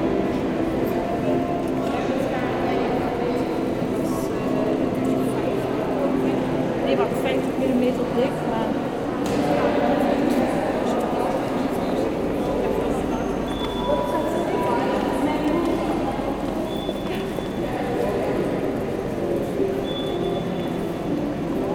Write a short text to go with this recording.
Inside the hall of the Maastricht station. People buying tickets on automatic machines, a child trying to play piano, announcement about a train going to Randwyck and above all, a very important reverberation.